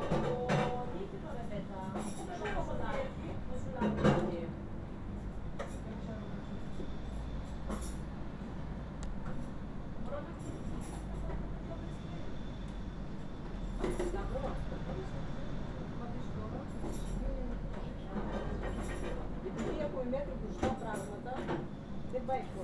Woman talking in kitchen - Yamas
Woman working in a kitchen
16 November 2012, 21:04